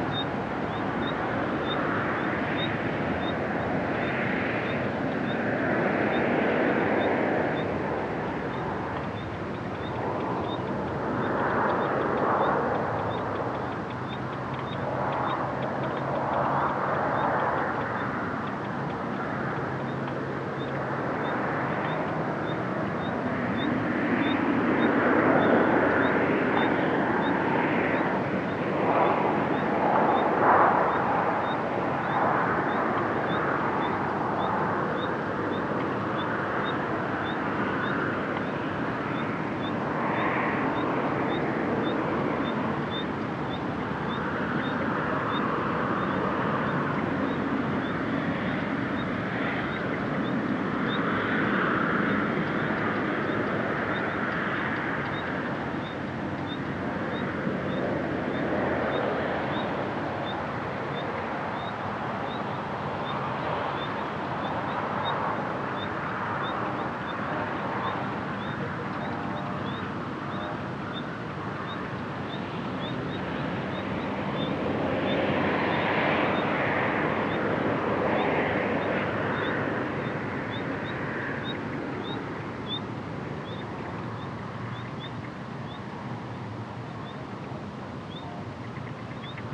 Paisaje sonoro en lo alto de la sierra (900m). Sonidos distantes del tráfico y maquinaria agricola conviven con las aves que habitan en la vegetación que se extiende por la ladera.
Spain, 24 July 2011